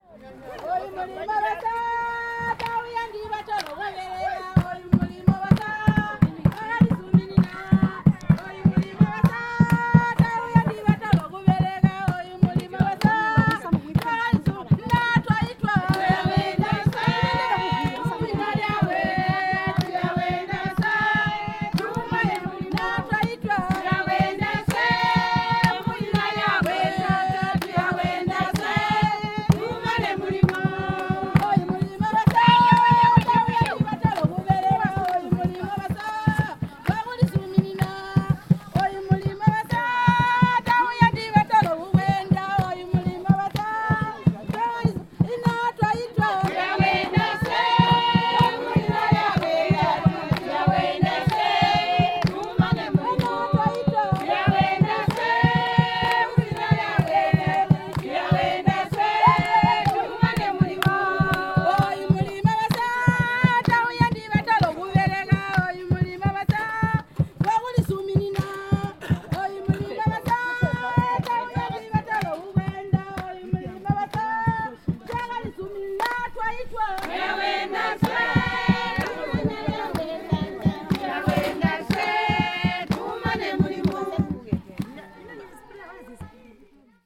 The Women form Mweezya and Mweka Women’s Clubs are coming like in a procession, dancing and singing, carrying their goods and produce in baskets and boxes on their heads…. they put Chitenges on the ground and create a display for us… then the presentations of their projects begin...
23 August 2016, ~11:00